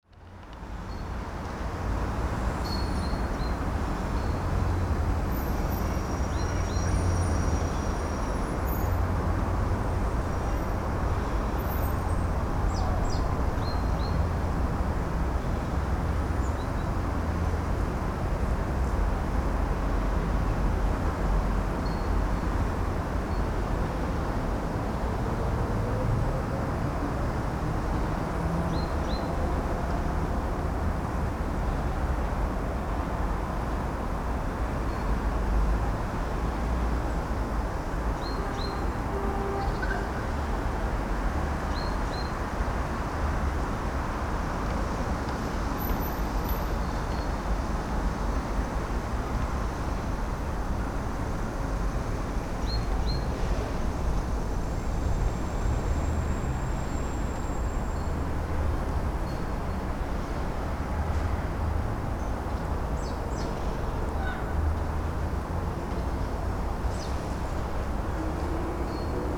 I just wonder how little birdies can live in this machine hell. Recorded at the centre of capital, amongst the pillars of operahouse
10 February, 13:30